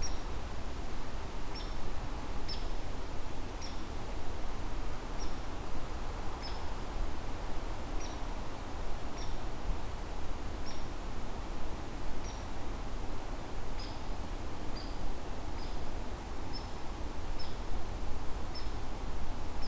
Captured along nature trail overlooking a meadow. Gunshot at 2:26. Recorded with a Tascam DR-40 Lenear PCM Recorder.
WI, USA, January 2019